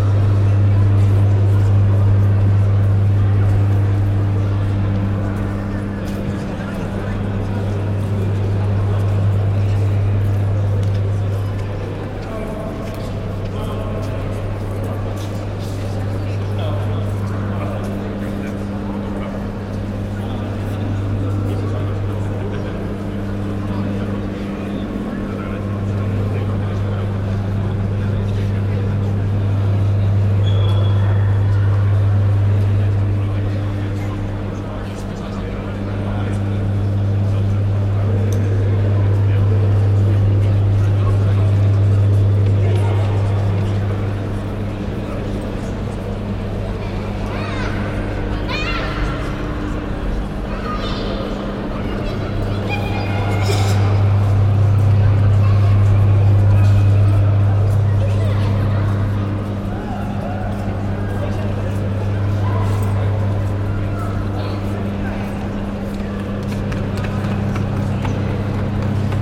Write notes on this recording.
Tate Modern entry hall drone London UK